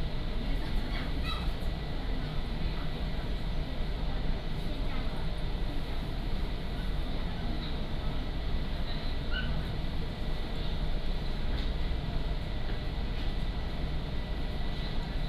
Shalun Line, Tainan City - In the carriage
From Bao'an Station to Tainan Station